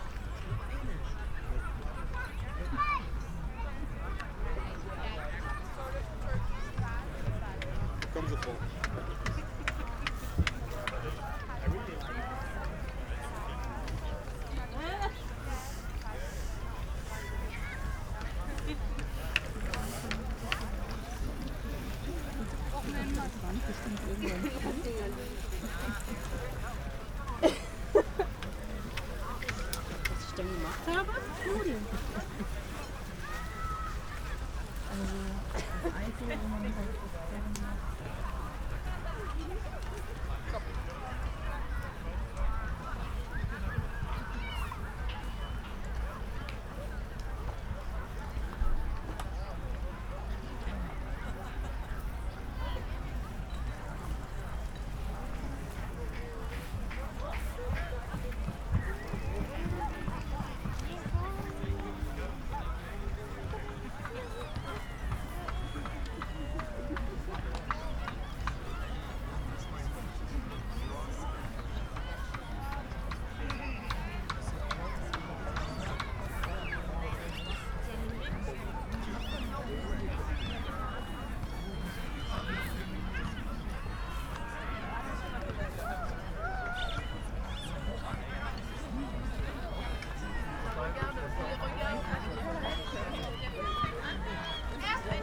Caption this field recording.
urban gardening project called Allmende, on the former airport field. The area has grown a lot within the last years. Plants are grown in wooden boxes above the ground, because of the possibly polluted soil. On summer evenings, many people hang out here enjoying sunset. (Sony PCM D50, DPA4060)